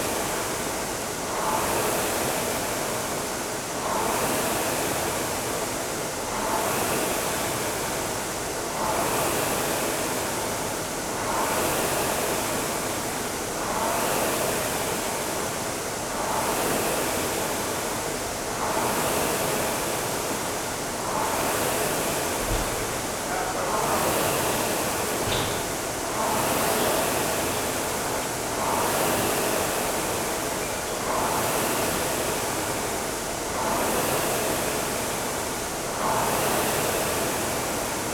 Nürtingen, Deutschland - 500m
training on a rowing machine ergometer